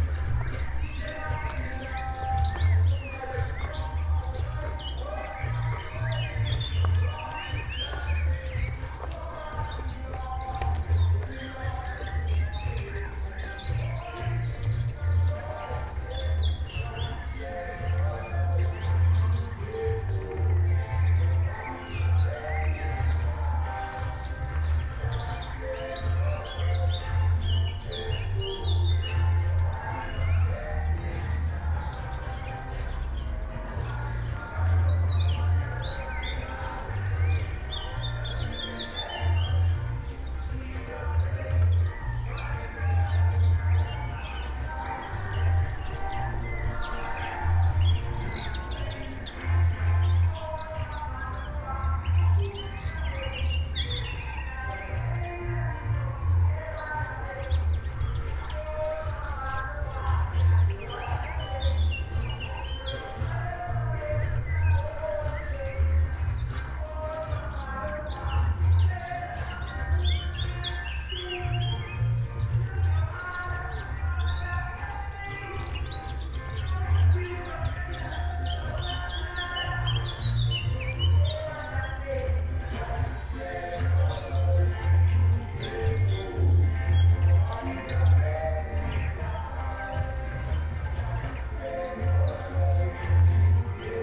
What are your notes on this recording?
over the past six weeks, since the beginning of the lockdown and social distancing regulations, "Brandheide radio" goes on air for 30 minutes form some speakers in one of the gardens. here we are listening to the special street mix from a little further down the road; the birds in some large old trees here seem to enjoy tuning in too...